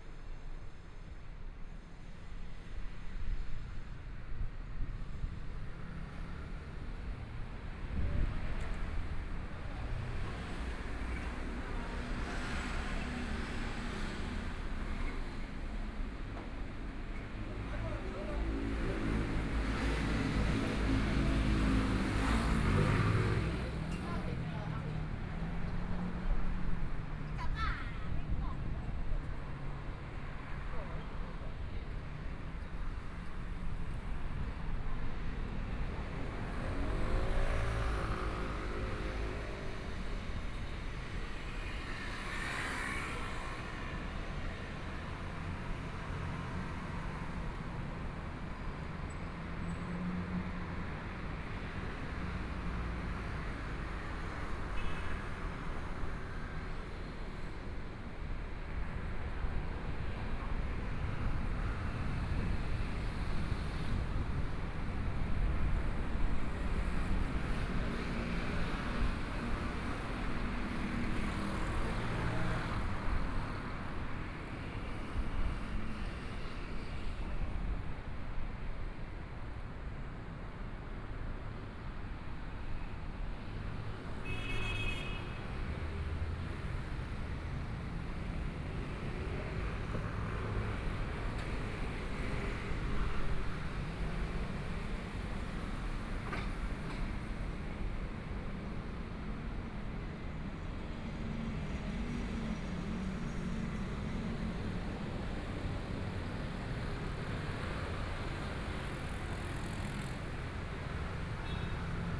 Walking on the road （ Linsen N. Rd.）from Nanjing E. Rd. to Minsheng E. Rd., Traffic Sound, Binaural recordings, Zoom H4n + Soundman OKM II
欣欣百貨 Zhongshan District, Taipei City, Taiwan